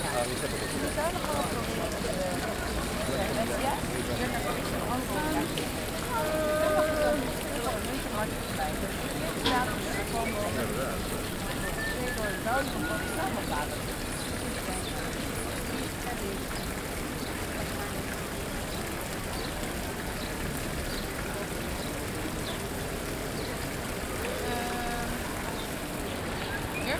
El Barri Gòtic, Barcelona, Barcelona, España - Fountain at Plaça Reial
Water recording made during World Listening Day.